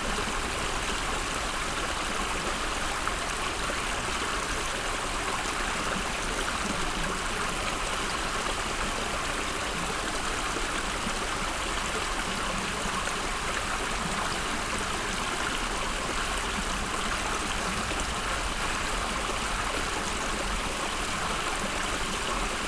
Ottignies-Louvain-la-Neuve, Belgique - Malaise river
The Malaise river, a small river in the woods.
Ottignies-Louvain-la-Neuve, Belgium, July 2016